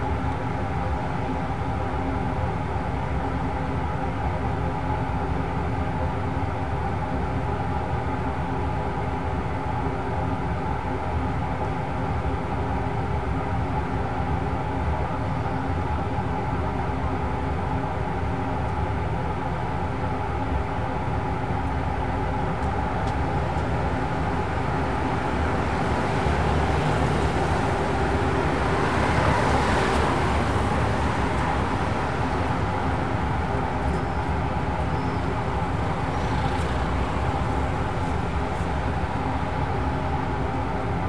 Rue Antoine Deville, Toulouse, France - Drone AIR C
air-conditioning, car, street